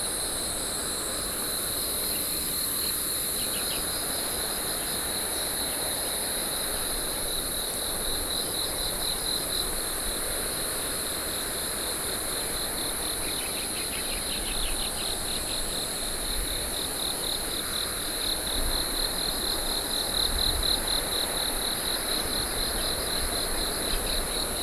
Shilin District, Taipei - early in the morning
Early in the morning, River bank, Sony PCM D50 + Soundman OKM II
23 June, ~06:00